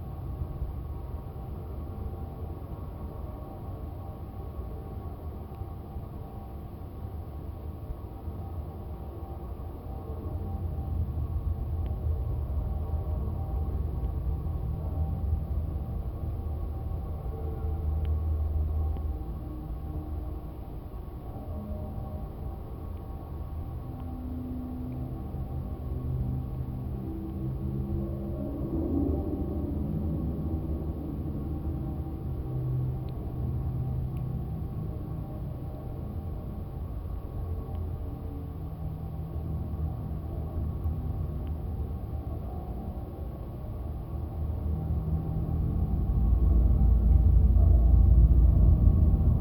Upės gatvė, Lithuania, chimney support wire
some warehouse. long chimney and long iron support wires. listening how it drones...
Utenos apskritis, Lietuva, 18 January 2020, 16:40